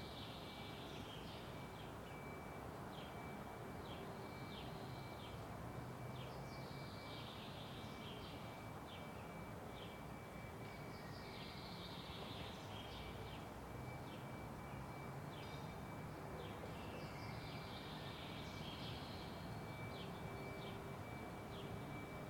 Laisvės al., Kaunas, Lithuania - Soundscape